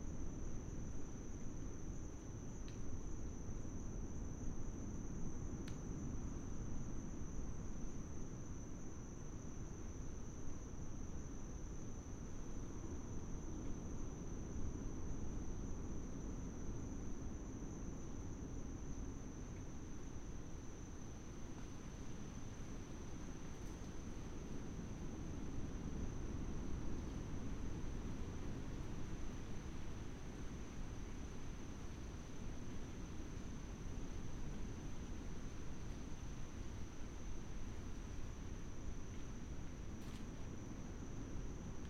Coastal Forest, Atiu Cookinseln - Coastal Rain Forest at night, no rain.
Soundscape of the coastal rainforest at night. The forest is unique on Atiu with a lush vegetation and a closed canopy. The squealing calls in the recording are from a chattering Kingfisher, a bird species endemic to the Cook Islands. Otherwise there is a host of insects, twigs and leaves cracking and/or falling and of course in the background the ever present roar of waves on the outer reef. Recorded with a Sound Devices 702 field recorder and a modified Crown - SASS setup incorporating two Sennheiser mkh 20 microphones.
Kūki Āirani